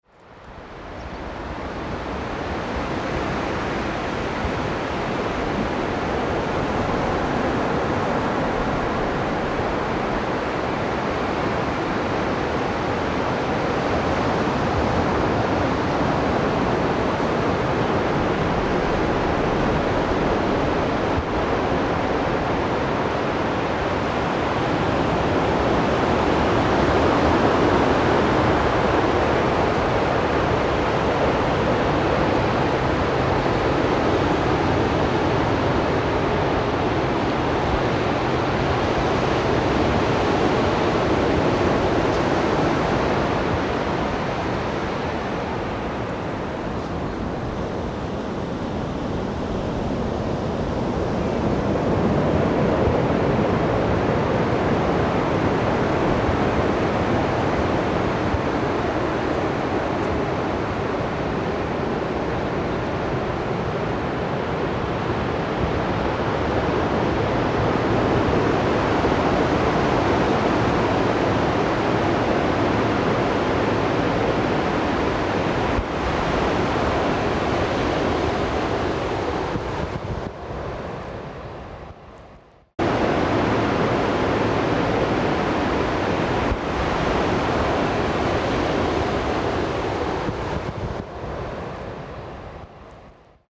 {"title": "Vauville, France - Rocher Vauville", "date": "2014-10-29 17:40:00", "description": "On the rocks at Vauville beach, Zoom H6 and two canon microphones…", "latitude": "49.64", "longitude": "-1.86", "altitude": "95", "timezone": "Europe/Paris"}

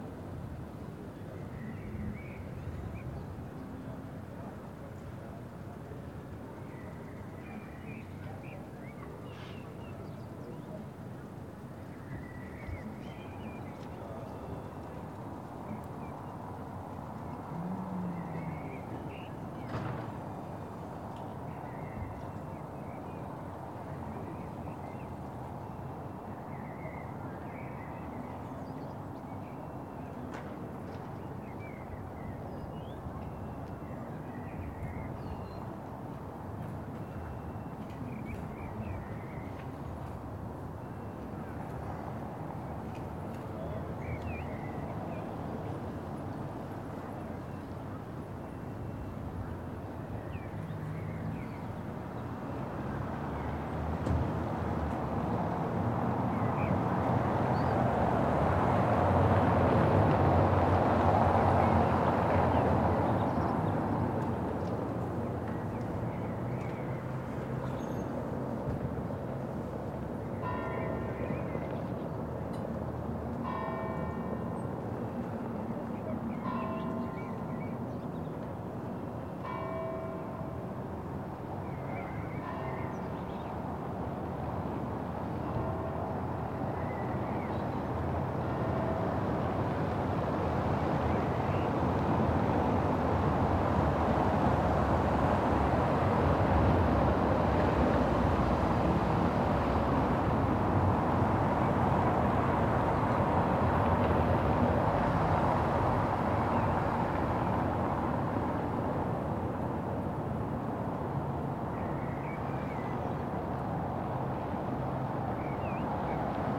{"title": "Reuterstrasse: Balcony Recordings of Public Actions - No Clapping Day 05", "date": "2020-03-25 19:00:00", "description": "Daily clapping has already stopped. The silence in the beginning - so quiet!\nSony PCM D-100 from balcony", "latitude": "52.49", "longitude": "13.43", "altitude": "43", "timezone": "Europe/Berlin"}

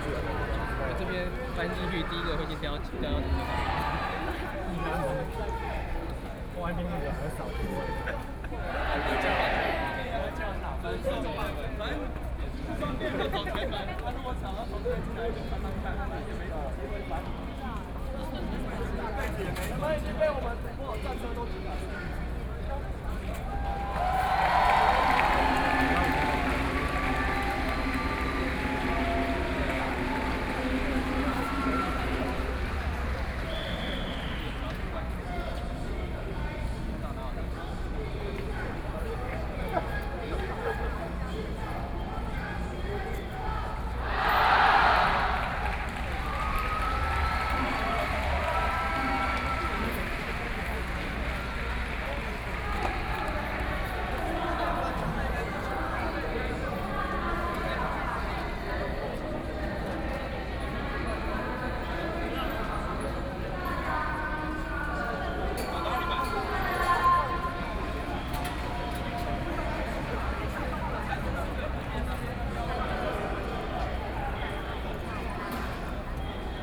{"title": "Jinan Rd, Taipei City - Protest", "date": "2013-08-18 10:21:00", "description": "Nonviolent occupation, To protest the government's dereliction of duty and destructionㄝZoom H4n+ Soundman OKM II", "latitude": "25.04", "longitude": "121.52", "altitude": "11", "timezone": "Asia/Taipei"}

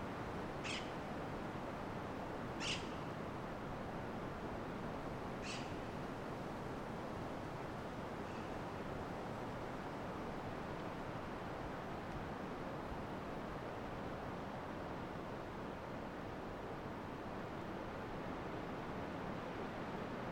{"title": "Valdivia, Chili - LCQA AMB VALDIVIA FOREST PIN WIND AIRY BIRDS MS MKH MATRICED", "date": "2022-08-26 16:30:00", "description": "This is a recording of a pin forest, located between Valdivia y Curiñanco. I used Sennheiser MS microphones (MKH8050 MKH30) and a Sound Devices 633.", "latitude": "-39.80", "longitude": "-73.30", "altitude": "247", "timezone": "America/Santiago"}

